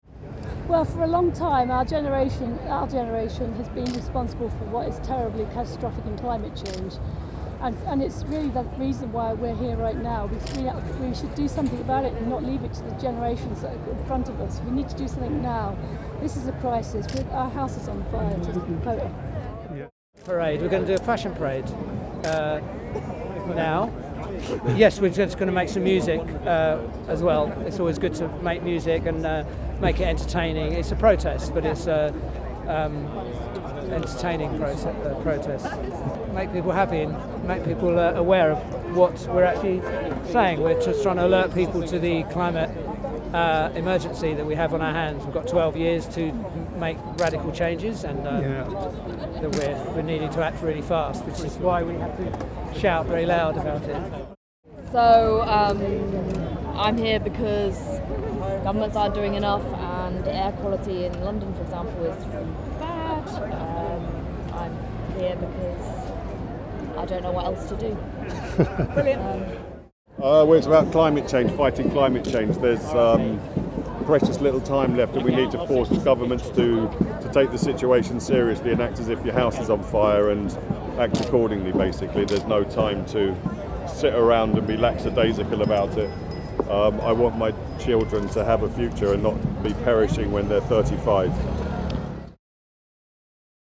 Regent St, Marylebone, London, UK - Extinction Rebellion: Protesters asked Why are you taking part?
Extinction rebels answering the question as to why they were here.
16 April 2019, ~19:00